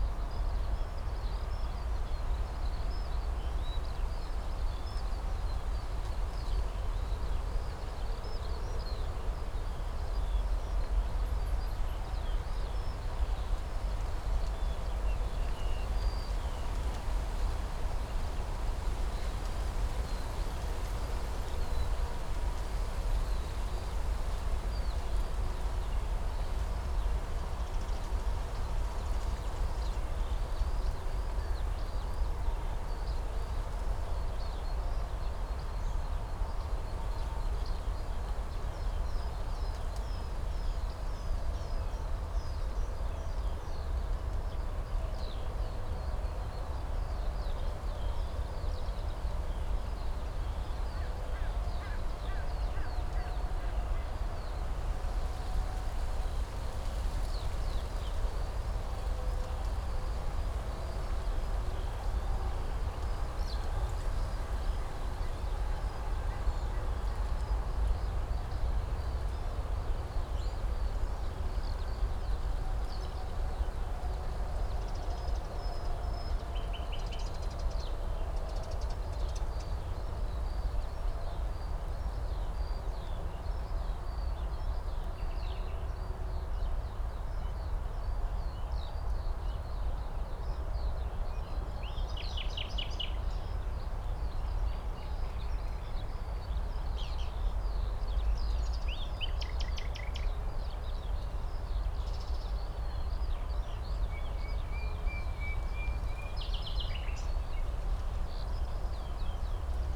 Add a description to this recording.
a morning in spring, at the poplar trees, with field larcs, dun crows, a nightingale and others, (Sony PCM D50, DPA4060)